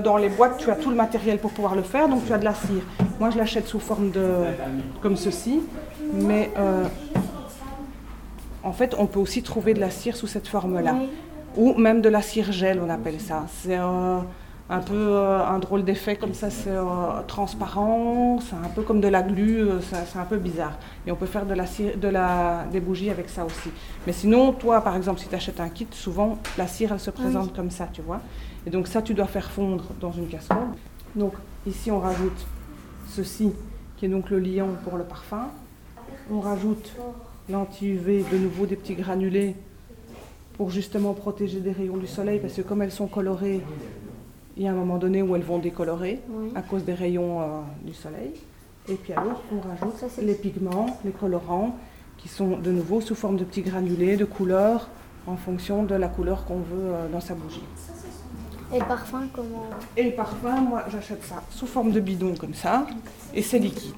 Court-St.-Étienne, Belgique - candle manufacturer
Children are recording a candle manufacturer. This place is a workshop where big candles are made and sold. Children are 6-8 years old.
Court-St.-Étienne, Belgium, 24 May 2015